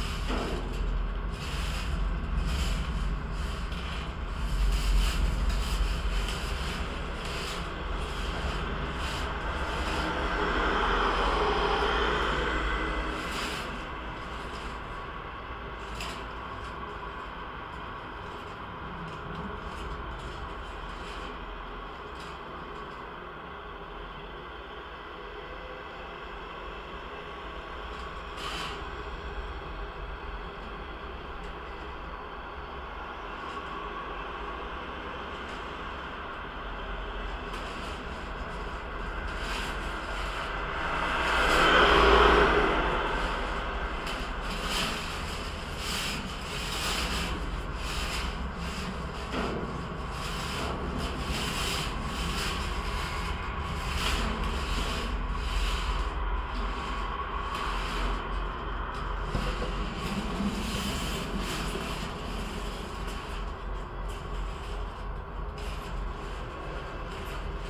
Margirio g., Ringaudai, Lithuania - Metal plate fence
A four contact microphone recording of a brand new metal plate fence. Sounds of traffic resonate throughout the fence, as well as some tree branches brushing against it randomly. Recorded with ZOOM H5.